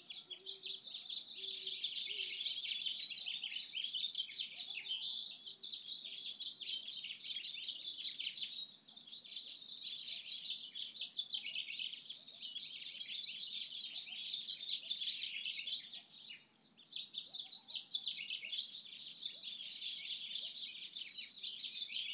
SMIP RANCH, D.R.A.P., San Mateo County, CA, USA - Waking with the Birds
Early morning bird activity between row of pines and the artist's barn.